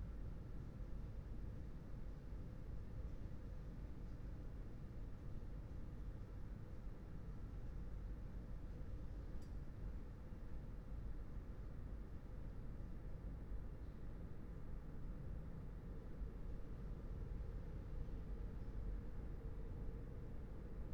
Berlin Bürknerstr., backyard window - Hinterhof / backyard ambience
23:31 Berlin Bürknerstr., backyard window
(remote microphone: AOM5024HDR | RasPi Zero /w IQAudio Zero | 4G modem
Berlin, Germany